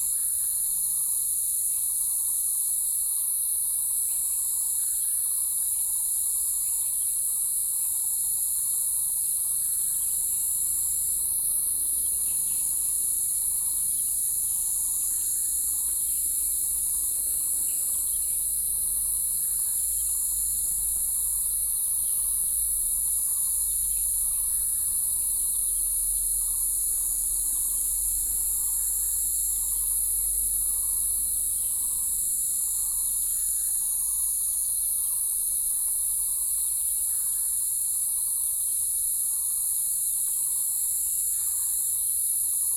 Xiaopingding, 淡水區, New Taipei City - Frog calls and Insect sounds
Frog calls, Birds singing, Insect sounds, Binaural recordings, Sony PCM D50 + Soundman OKM II
New Taipei City, Taiwan